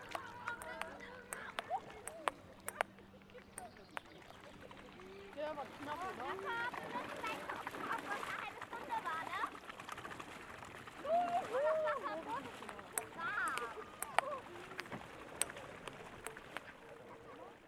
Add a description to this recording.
Strandbad Tegeler See, Tischtennisplatten, Tischtennis